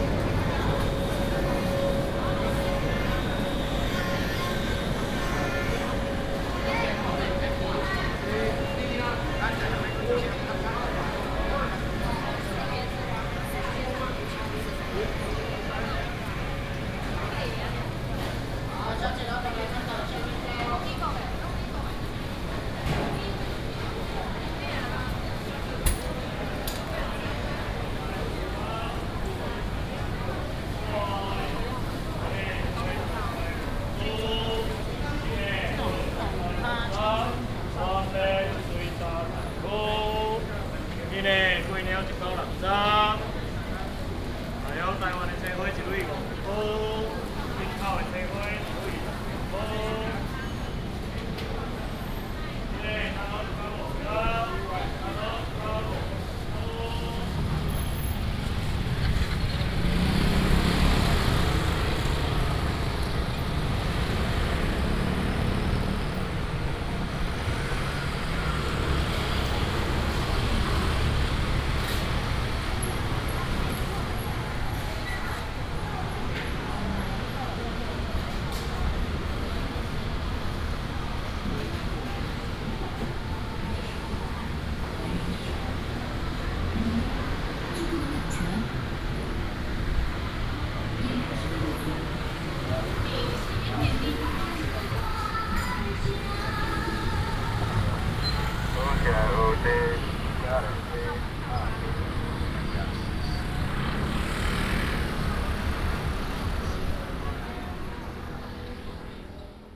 新一點利黃昏市場, Beitun Dist., Taichung City - dusk market
Walking through the dusk market, Air conditioning noise, Binaural recordings, Sony PCM D100+ Soundman OKM II